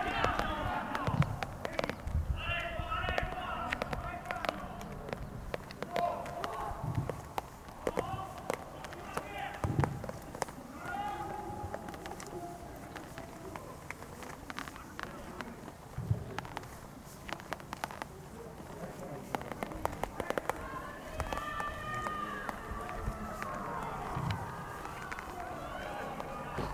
local football match under light rain on a cold Sunday afternoon. nearly nobody is watching. Drops of rain from the tennis camp marquee